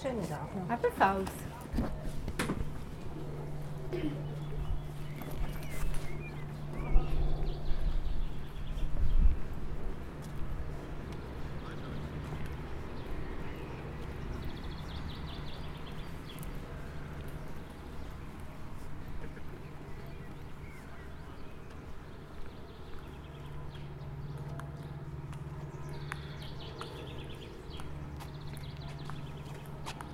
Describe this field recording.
Marzilibad, Eintritt gratis, jedoch Kästchengebühr, Bärndütsch der langsamste Dialakt der Schweiz